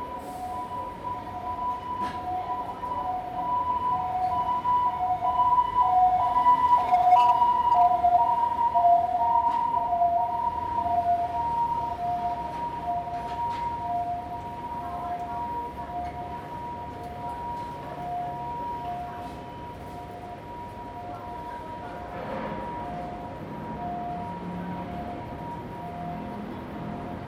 Ln., Yingshi Rd., Banqiao Dist. - Coffee shop
In the Coffee shop, Sound of an ambulance, Sound from the construction site
Zoom H2n MS+ XY
Banqiao District, New Taipei City, Taiwan, 21 August, 11:08